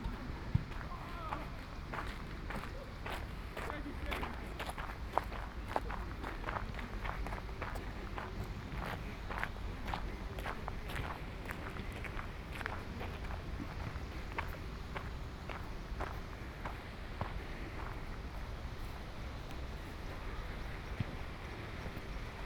Ascolto il tuo cuore, città. I listen to your heart, city. Chapter X - Valentino Park at sunset soundwalk and soundscape in the time of COVID19: soundwalk & soundscape
Monday March 16th 2020. San Salvario district Turin, to Valentino park and back, six days after emergency disposition due to the epidemic of COVID19.
Start at 6:17 p.m. end at 7:20 p.m. duration of recording 1h'03’00”
Walking to a bench on riverside where I stayed for about 10’, from 6:35 to 6:45 waiting for sunset at 6:39.
The entire path is associated with a synchronized GPS track recorded in the (kmz, kml, gpx) files downloadable here: